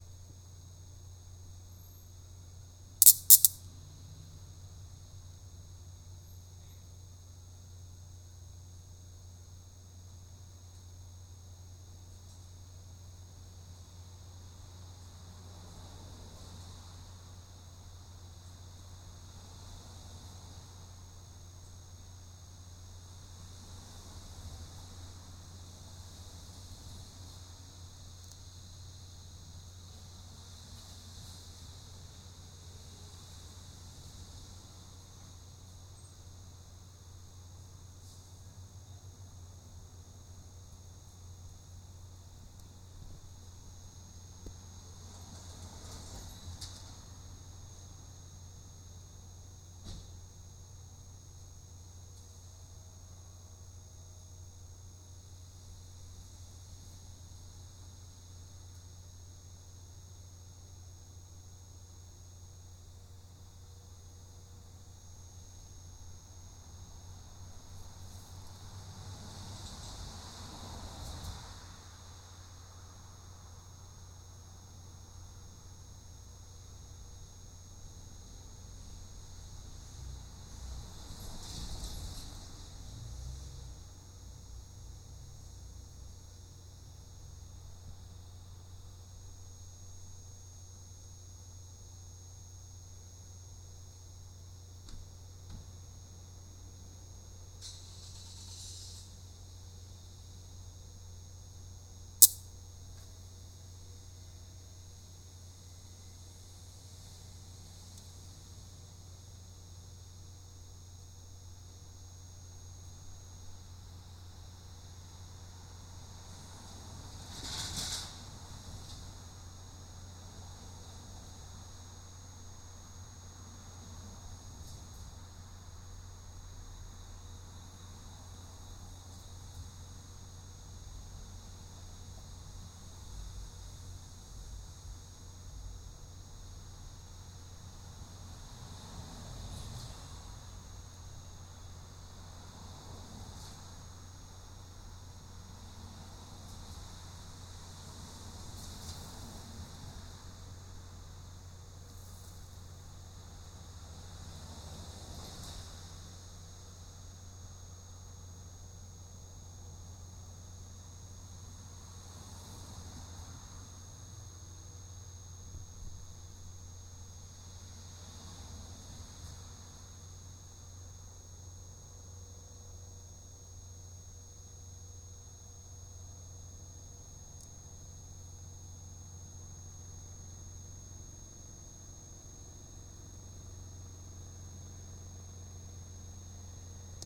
Station North Mews, Baltimore, MD, USA - Bug light
There is an electric bug light that killed a couple bugs during the recording, with cars and other sounds in the back.